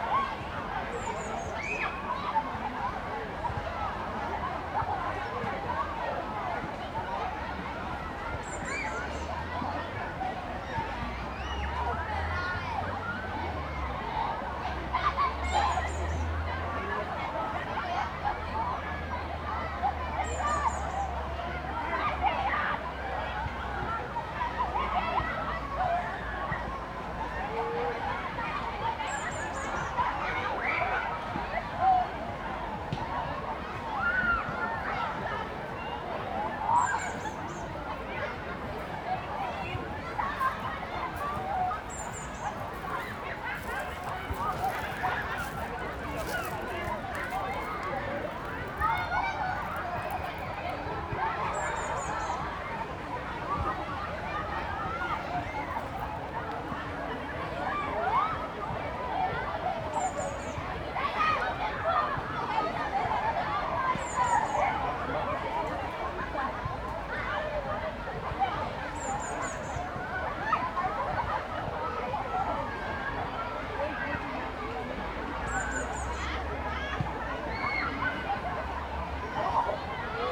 Gertrudstraße, Berlin, Germany - Enjoying open air swimming
Such beautiful warm weather - 28C, sun and blue sky. Kids, and others, enjoying open air swimming pools is one of Berlin's definitive summer sounds. Regularly mentioned as a favourite. The loudspeaker announcements reverberate around the lake.
Deutschland, 16 June 2021, ~17:00